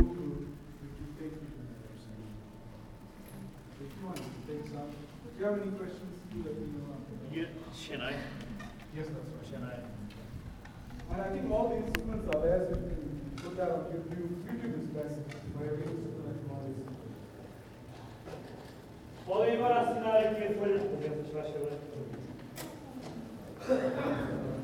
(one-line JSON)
{"title": "Nossa Senhora do Pópulo, Portugal - Auditório EP2", "date": "2014-03-03 18:30:00", "description": "An Auditorium for classes in ESAD.CR, which is round and has an specific acoustic.", "latitude": "39.39", "longitude": "-9.14", "timezone": "Europe/Lisbon"}